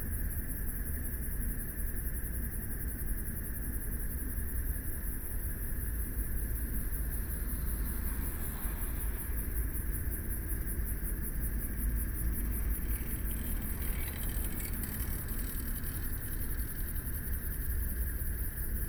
關渡里, Beitou District - Environmental sounds
Frogs sound, Traffic Sound, Environmental Noise, Bicycle Sound, Pedestrians walking and running through people
Binaural recordings
Sony PCM D100+ Soundman OKM II SoundMap20140318-5)
18 March 2014, 20:04